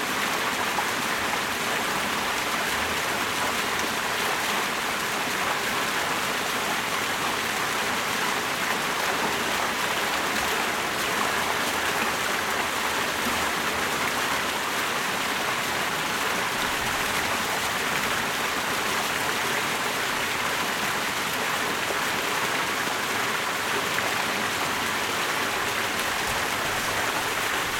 Sounds of water from a small waterfall at 845 United Nations Plaza.